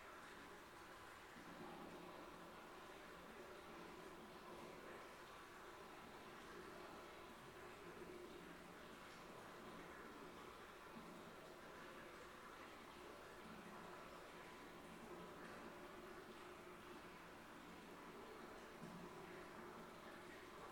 Abbaye de Clairefontaine, Arlon, België - Clairefontaine Crypt
Sound of the source Saint Bernard as heard from the crypt of the abbaye de Clairefontaine.
Arlon, Belgium